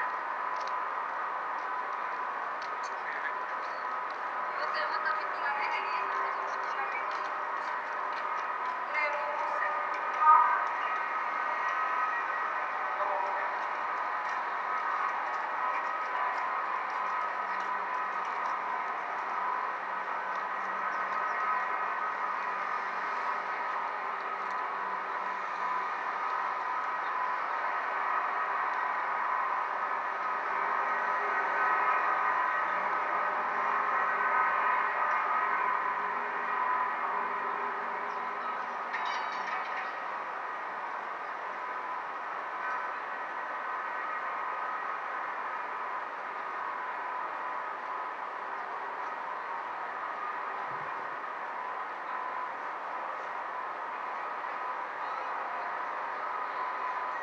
Maribor, Slovenia - one square meter: metal and concrete gate
a metal grid within a concrete gate divides one section of the parking lot from the other. it vibrates with the wind and captures surrounding sounds. recorded with contact microphones. all recordings on this spot were made within a few square meters' radius.